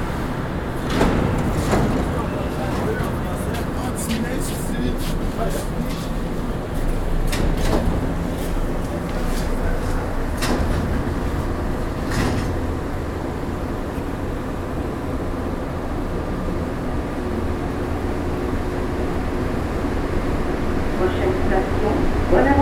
Montreal: Lucien L`allier to Bonaventure - Lucien L`allier to Bonaventure
equipment used: Ipod Nano with Belkin TuneTalk
Getting on the metro and riding to Bonaventure